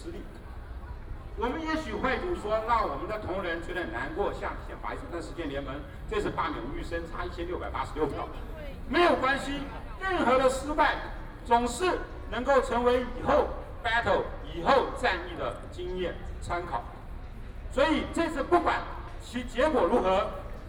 Qingdao E. Rd., Taipei City - Speech
Walking through the site in protest, People and students occupied the Legislature
Binaural recordings